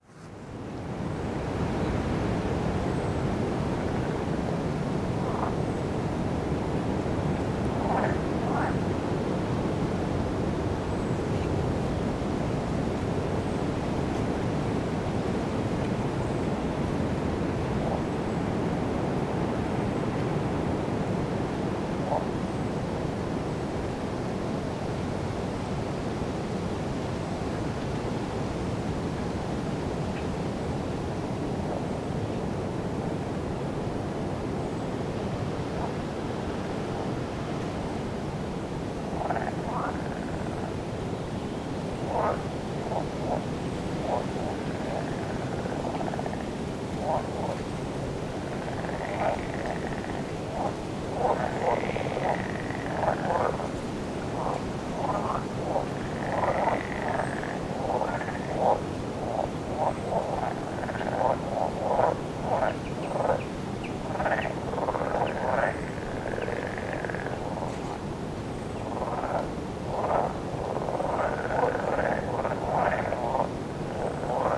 Zenkino (Зенькино) Village, Moscow district, Russian Federation. - Toads in a swamp #9
Near the village there is a swamp that hidden in the tall grass. Toads call on a background of a distant busy road.
Recorded with the XY misc of Zoom H5 recorder by hand.
Центральный федеральный округ, Россия, May 2020